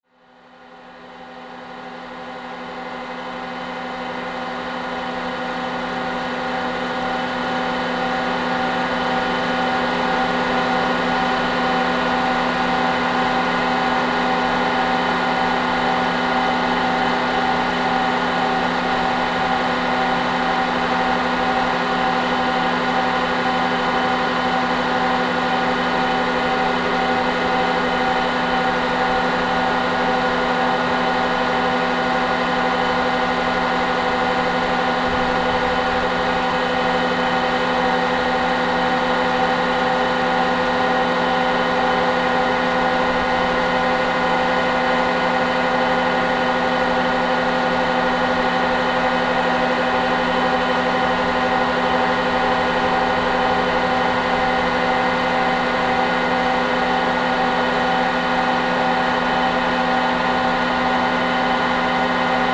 Mont-Saint-Guibert, Belgique - The dump
This is the biggest dump of Belgium. A big machine is catching gas into the garbages.
Mont-Saint-Guibert, Belgium, 2 October 2016, 13:40